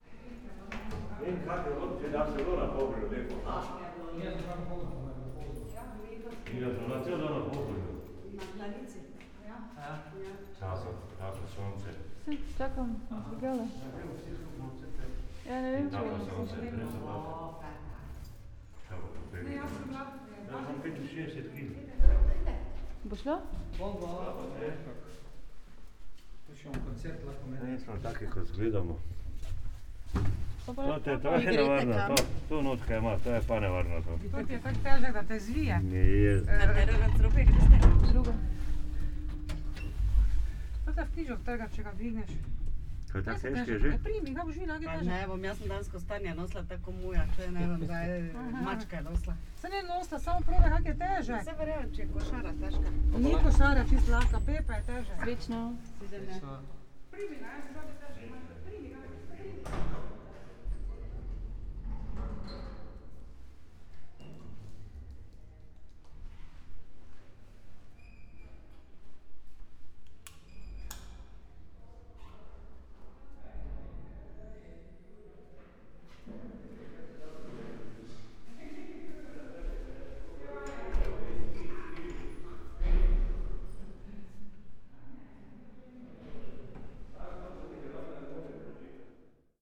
lift, ulica dušana kvedra, maribor - lift situation
with five people, having lift ride together, small talks
Maribor, Slovenia, 17 October, 19:01